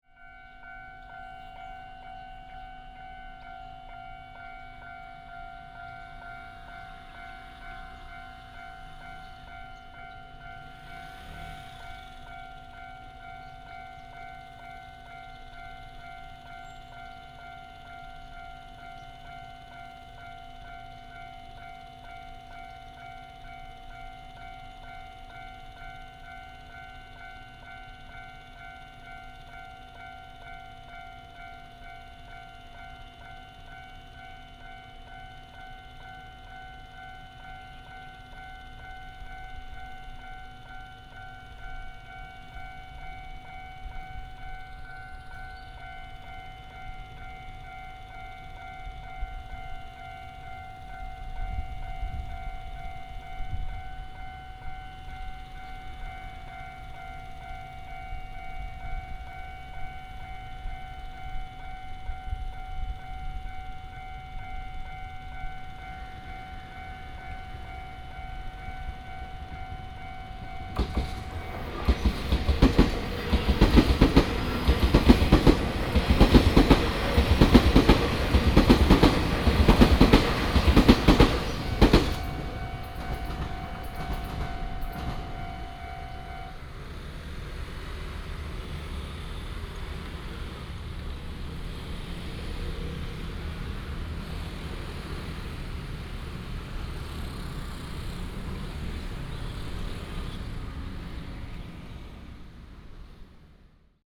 Mingde St., Huatan Township - Near the railway
Near the railway, Bird call, Traffic sound, The train passes by
Huatan Township, Changhua County, Taiwan, March 2017